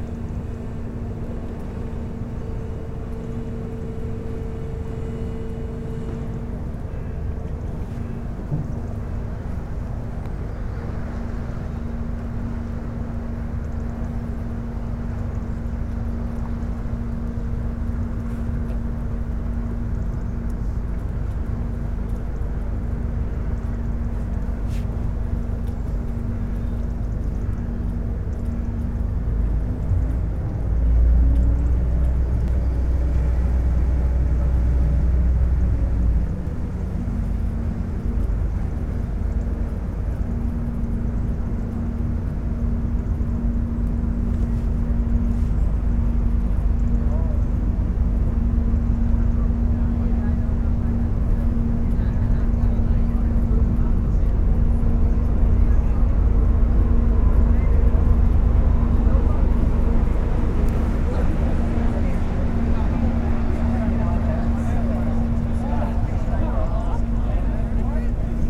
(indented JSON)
{
  "title": "Poses, France - Poses sluice",
  "date": "2016-09-20 09:30:00",
  "description": "Boat going out the sluice. A very funny thing : the boat on the satelitte view is the one recorded ! You can recognize it with the colors, it's the Swiss Sapphire.",
  "latitude": "49.31",
  "longitude": "1.24",
  "altitude": "13",
  "timezone": "Europe/Paris"
}